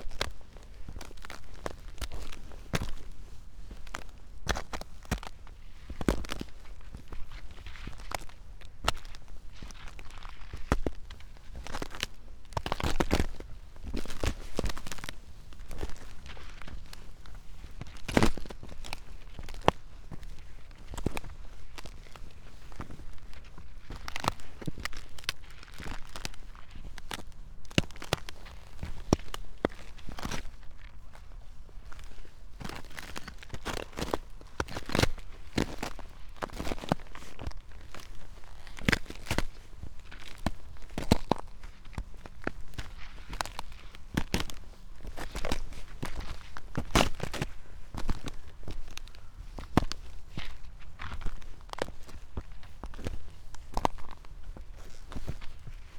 path of seasons, frozen meadow, piramida - strange whistle
Maribor, Slovenia, February 2014